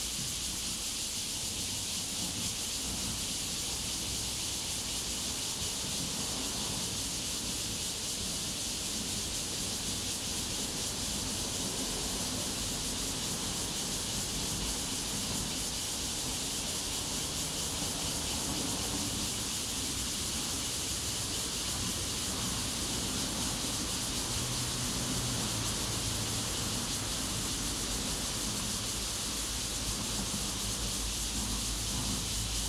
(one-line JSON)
{"title": "月眉國小, Guanshan Township - Cicadas sound", "date": "2014-09-07 09:56:00", "description": "Cicadas sound, Traffic Sound, In elementary school, Very hot weather\nZoom H2n MS+ XY", "latitude": "23.01", "longitude": "121.15", "altitude": "209", "timezone": "Asia/Taipei"}